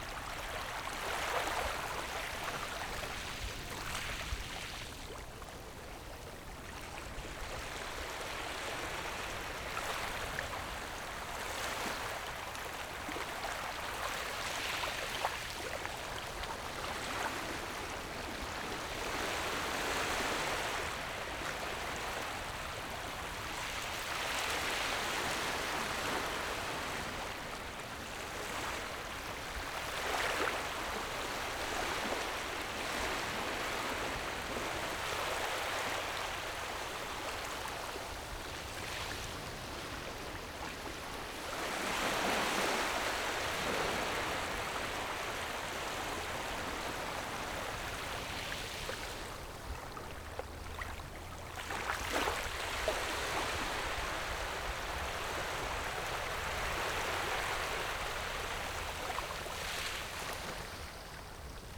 后沃海濱公園, Beigan Township - Waves and tides
Sound of the waves, Small beach, Tide
Zoom H6 +Rode NT4